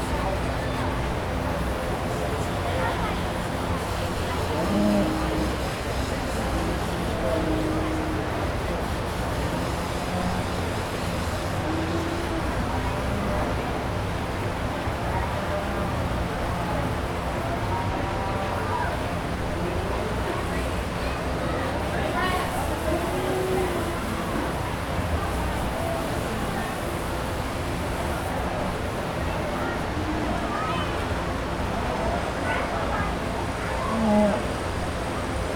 neoscenes: state fair washing cows
Pueblo, CO, USA, 29 August 2011